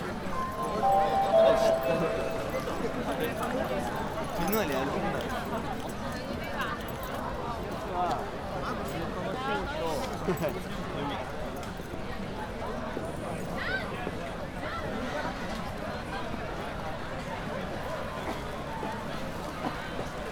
Tokyo, Uedo Park - evening picnic
big picnic in the park, people gathering all over the place, huge crowd moving around the park, grilling, eating, drinking, having fun, reflecting on blooming sakura trees - the japanese way.
北葛飾郡, 日本, 28 March 2013, ~20:00